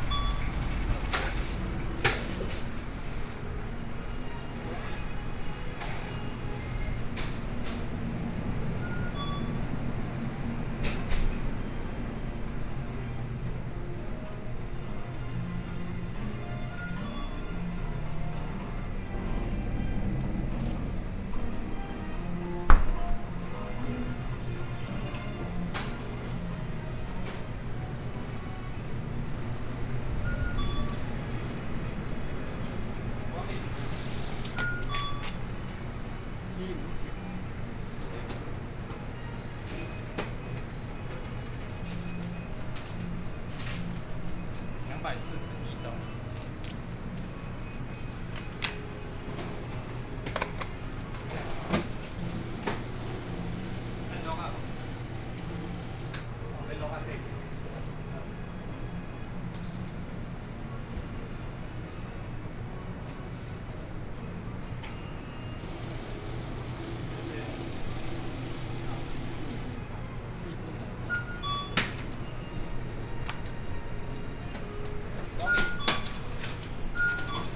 Changhua City, Taiwan - walk in the Street
walking in the Street, Convenience store, Checkout, Traffic Noise, Zoom H4n+ Soundman OKM II